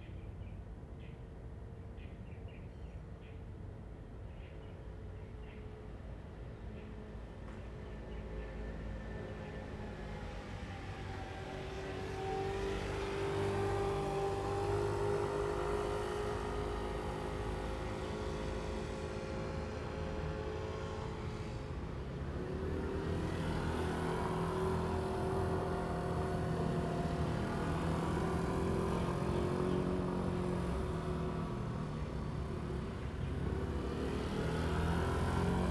小琉球遊客中心, Hsiao Liouciou Island - Birds singing
Birds singing
Zoom H2n MS +XY